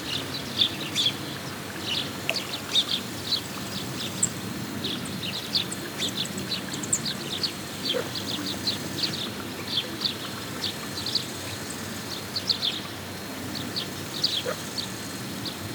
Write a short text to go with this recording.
Aves, ranas, el viento y como contrapunto, el sonido contínuo del motor de la bomba de agua en la balsa.